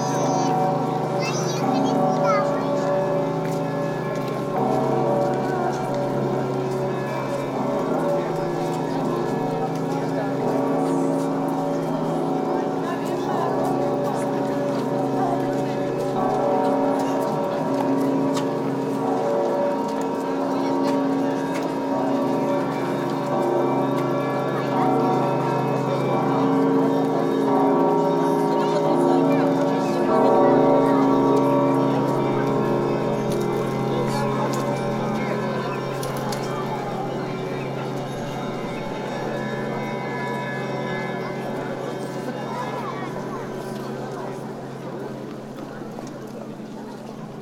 Dzerginsk, near Nikolo-Ugreshsky Monastery, St. Nicholas the Miracle-Worker day, Bellls chime